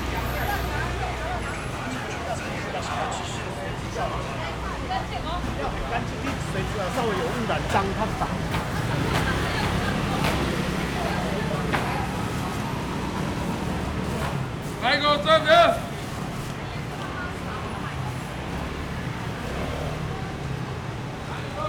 Walking in the traditional market
Rode NT4+Zoom H4n
New Taipei City, Taiwan, 15 March 2012